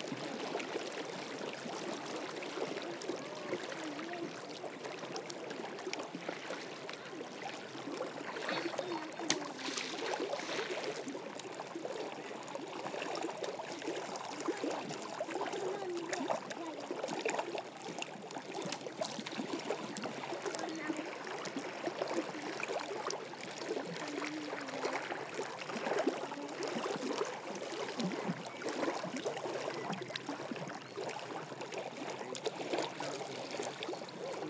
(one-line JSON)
{"title": "Walking Holme Rocks and Ducks", "date": "2011-04-19 13:36:00", "description": "A parabolic recording across the water. Ducks swimming, waves and a family throwing progressively larger stones, rocks and bricks into the water.", "latitude": "53.56", "longitude": "-1.84", "altitude": "247", "timezone": "Europe/London"}